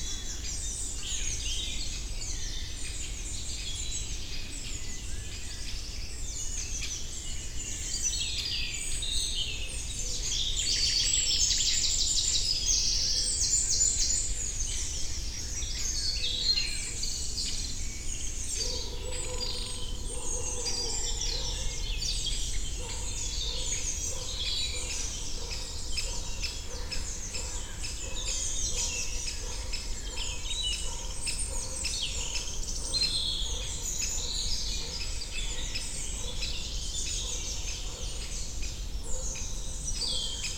Montigny-le-Tilleul, Belgique - Birds in the forest
Lot of juvenile Great Tit, anxious Great Spotted Woodpecker (tip... tip... tip...), Common Chaffinch.
3 June 2018, 08:30